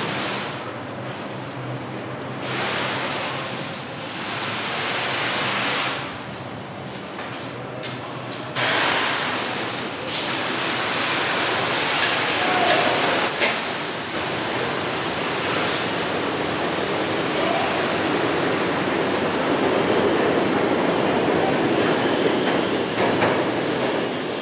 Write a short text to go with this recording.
MTA workers clean the subway floors and stairs at Grand Central/42st Street station